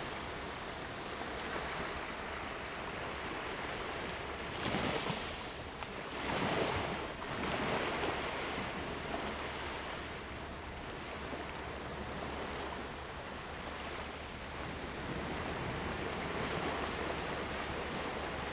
漁福村, Hsiao Liouciou Island - Small beach
At the beach, Sound of the waves
Zoom H2n MS+XY
Pingtung County, Taiwan, 1 November, ~16:00